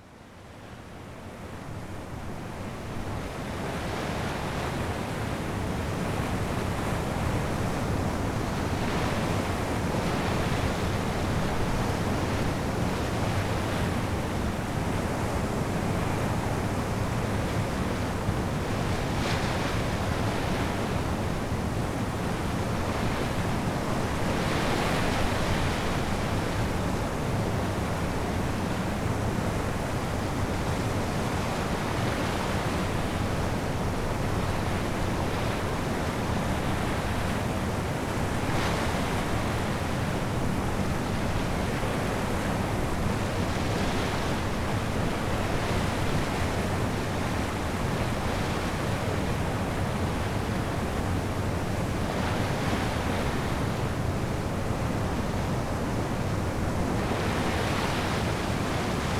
Lithuania, Anyksciai, at the dam
at the dam in river Sventoji